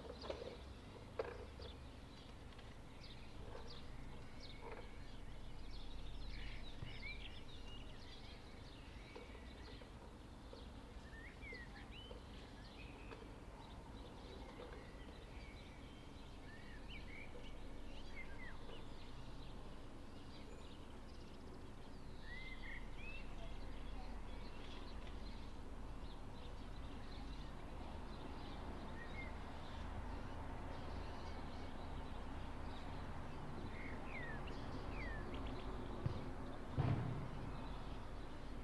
Eggenberg, Graz, Österreich - Birds and more....
Nice recording from our balcony with a Zoom H4n and a Rode NTG-1